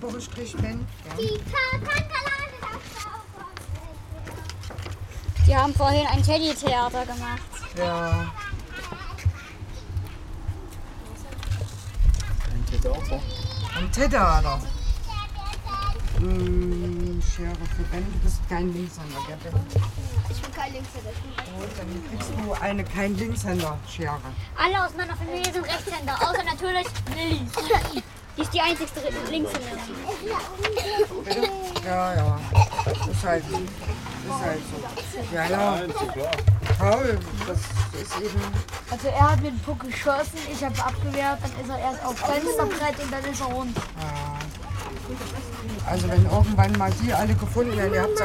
gotha, kjz big palais, im pavillon - donnerbuddys basteln
im außenpavillon am kinder- und jugendzentrum big palais beim basteln mit kleinen kindern. wir basteln donnerbuddys (zum film ted). kinderstimmen, betreuer, verkehr, passanten.
Gotha, Germany, 9 August 2012, ~16:00